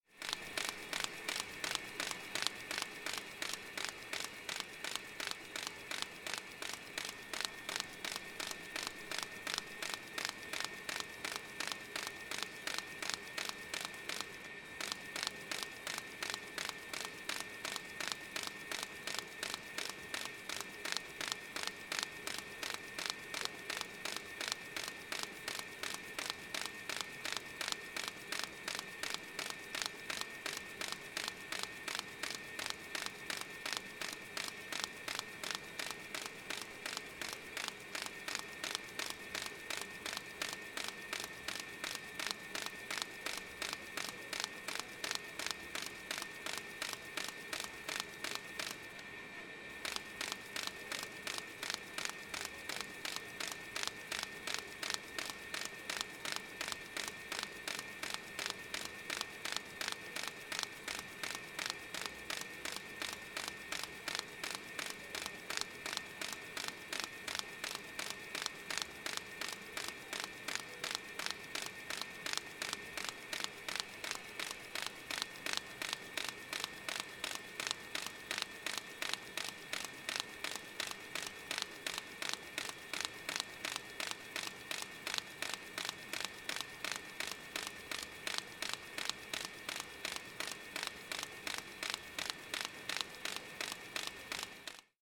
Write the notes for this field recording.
This is the sound of pages being collated on a machine at Williams Press, Berkshire, where the KNITSONIK Stranded Colourwork Sourcebook was printed.